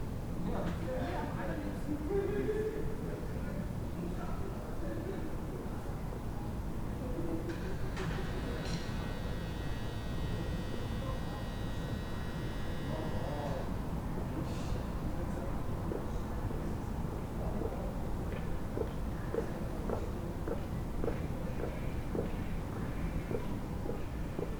Berlin: Vermessungspunkt Friedelstraße / Maybachufer - Klangvermessung Kreuzkölln ::: 19.04.2011 ::: 01:37